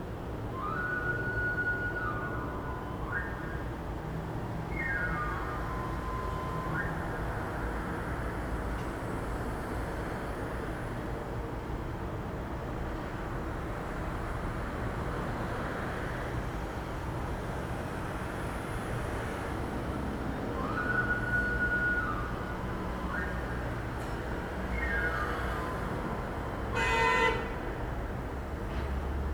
{"title": "Knife Sharpener on Barcelona", "date": "2011-01-17 11:20:00", "description": "An old profession that has a very particular way of advertising their service using a whistle.", "latitude": "41.39", "longitude": "2.14", "altitude": "83", "timezone": "Europe/Madrid"}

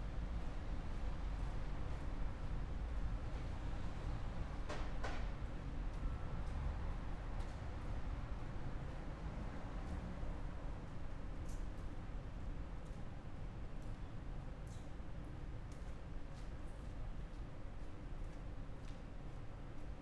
{"title": "Beco Toucinheiros, Lisboa, Portugal - Crossing Trains", "date": "2017-10-17 19:52:00", "description": "Traffic noise, people, 2 trains passing under metal bridge. Recorded with 2 omni Primo 172 DIY capsules (AB spaced stereo - 2 meters) into a SD mixpre6.", "latitude": "38.73", "longitude": "-9.11", "altitude": "3", "timezone": "Europe/Lisbon"}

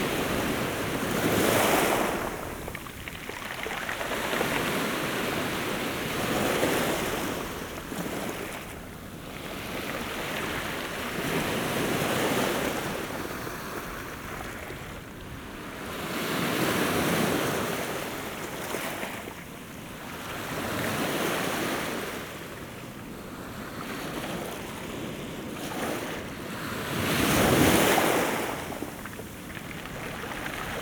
{"title": "Pihla, Panga, Saaremaa, Estonia - waves on the rocks", "date": "2022-07-29 11:34:00", "description": "Small waves glide over a rocky beach.", "latitude": "58.55", "longitude": "22.29", "altitude": "10", "timezone": "Europe/Tallinn"}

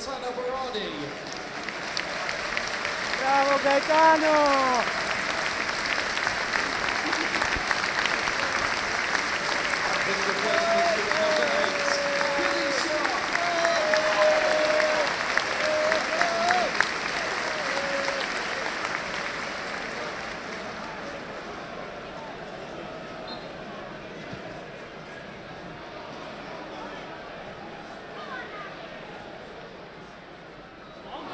{"title": "Elland Road Stadium, Leeds, West Yorkshire, UK - Leeds United final match of the season", "date": "2015-05-02 13:20:00", "description": "Binaural recording of the last match of the season between Leeds United and Rotherham, season 2014/2015.\nZoom H2N + Soundmann OKM II.", "latitude": "53.78", "longitude": "-1.57", "altitude": "51", "timezone": "Europe/London"}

